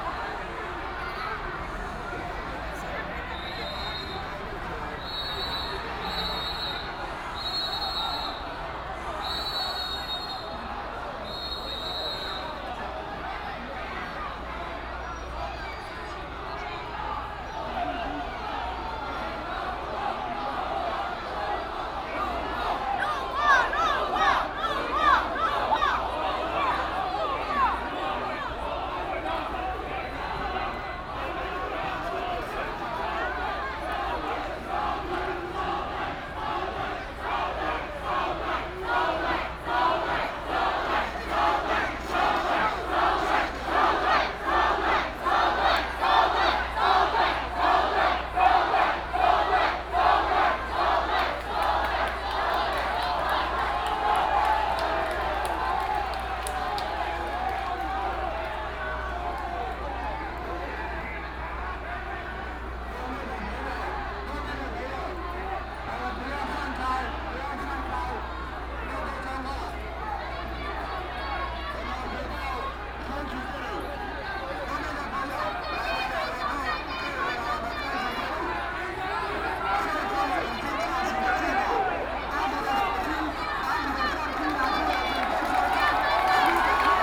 中正一分局, Taipei City - ' Passing ' protests
A lot of students and people in front of the police station to protest police unconstitutional, Traffic Sound, Students and people hands in the air and surrounded by riot police, Protest against police chief
Taipei City, Taiwan